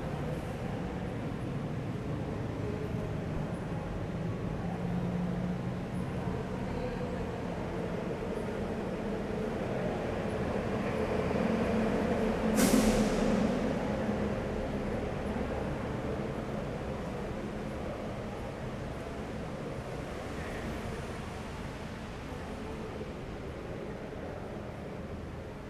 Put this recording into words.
Merida - Mexique, Un espace sonore empli de quiétude à l'intérieur du "Passage de la Révolution"